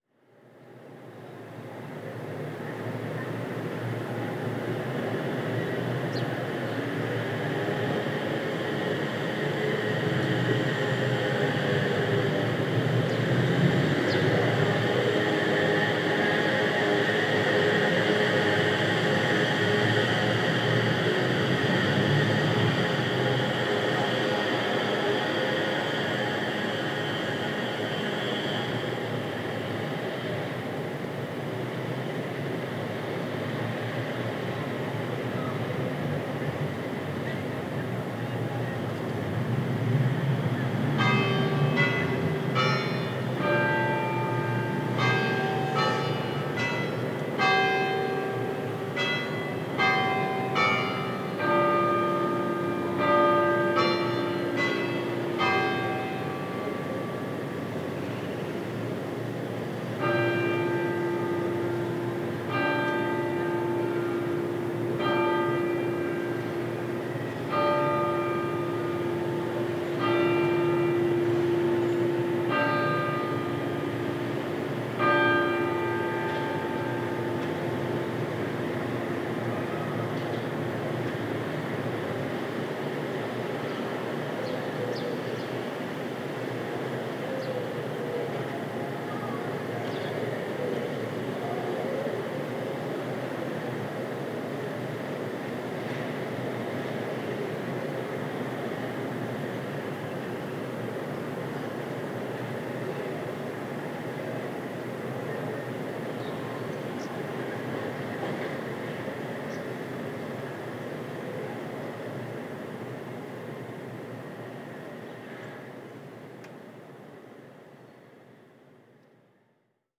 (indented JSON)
{
  "title": "Attic of 6 Place Sainte-Croix, Angers, France - (594 ORTF) Catherdal bells",
  "date": "2019-08-19 19:00:00",
  "description": "Bells of the Cathedral recorded from an attic of a tenement house.\nRecorded with ORTF setup of Sony PCM D-100",
  "latitude": "47.47",
  "longitude": "-0.55",
  "altitude": "48",
  "timezone": "Europe/Paris"
}